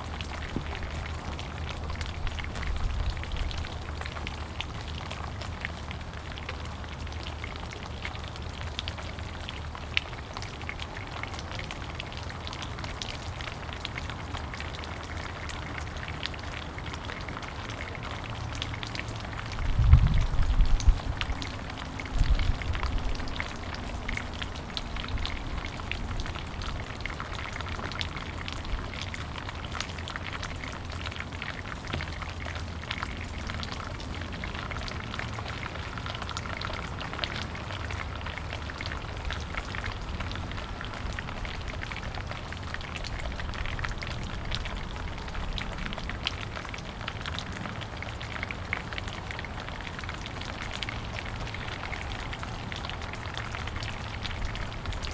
Muhlenberg College, West Chew Street, Allentown, PA, USA - Melting Snow
Snow melting off low lying frat house roof